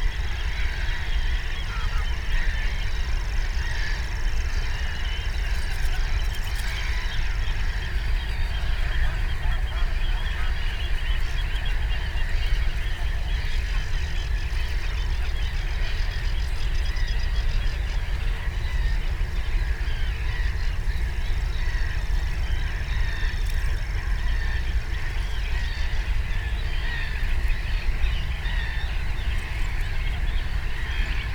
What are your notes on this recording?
cries of all kinds of birds, the city, the country & me: june 29, 2015